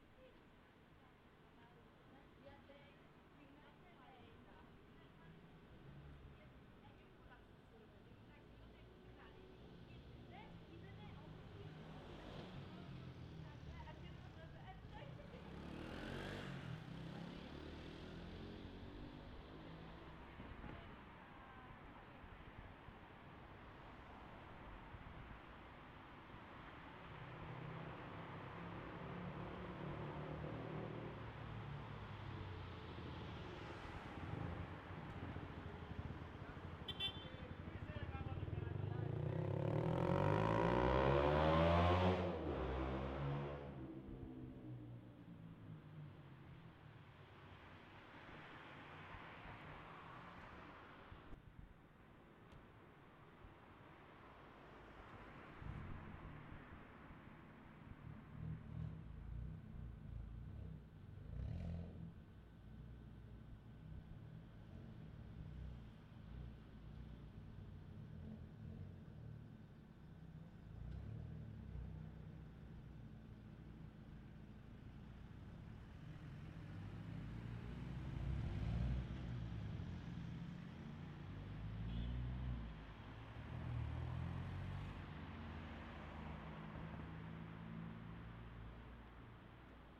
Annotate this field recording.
Sounds from a 2nd floor balcony of one of the city's main streets, Analipseos.